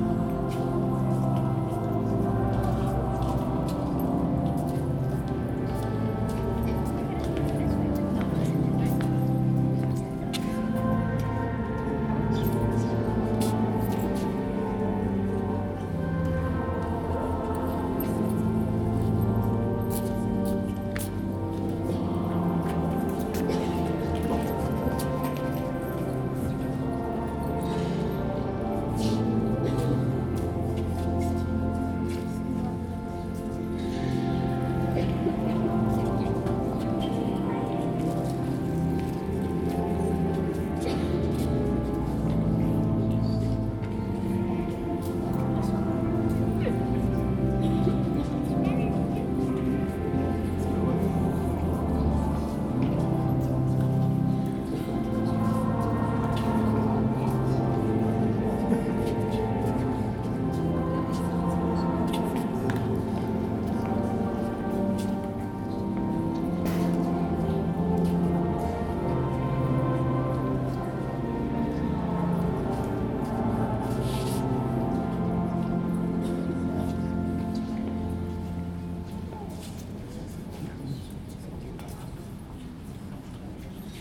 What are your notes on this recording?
Der Klang einer Messe in einer Seitenkalpelle, Schritte und Flüstern der Besucher | Sound of celebrating a mass, steps and whispers of visitors